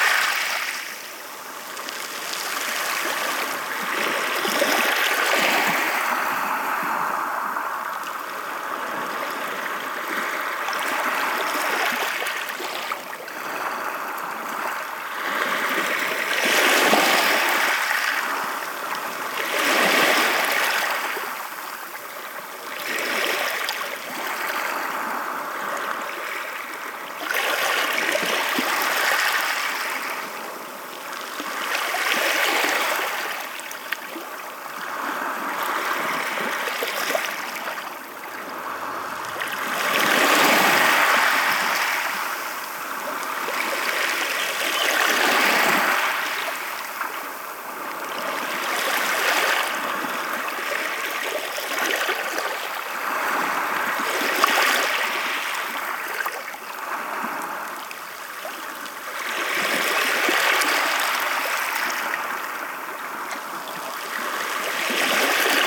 Cape Kujskij-sea pebbles, White Sea, Russia - Cape Kujskij-sea pebbles
Cape Kujskij-sea pebbles.
Мыс Куйский, шум моря, мелкая галька.